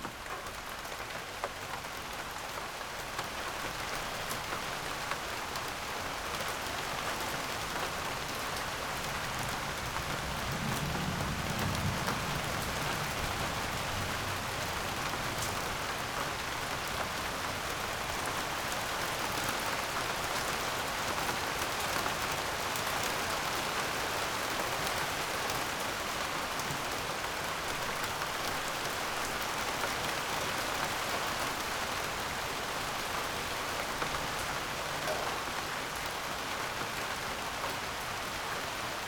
Berlin Bürknerstr., backyard window - spring rain, thunder
rain and thunder at night
(Sony PCM D50)
Berlin, Germany, 12 June 2019